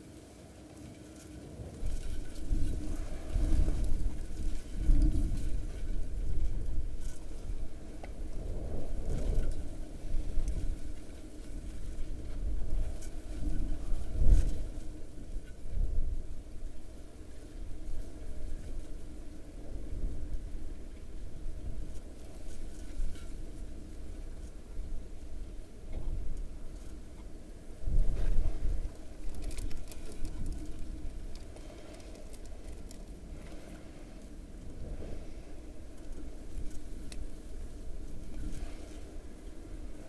Dungeness, Romney Marsh, UK - Blustery Resonance
Miniature microphones inside plastic waste on the beach. Wind.
South East, England, United Kingdom